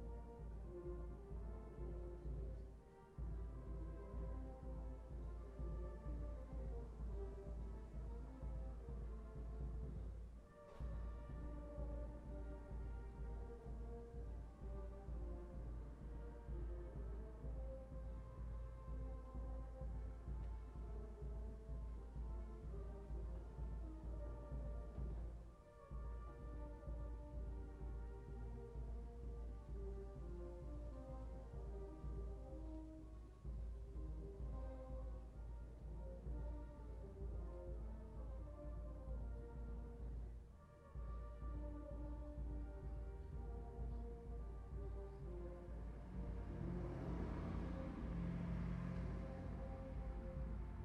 A brass band plays at a local fest or a wedding party. Its sound carries through the air through the neighbourhood.
Auenstein, Switzerland, 22 August 2009, 20:04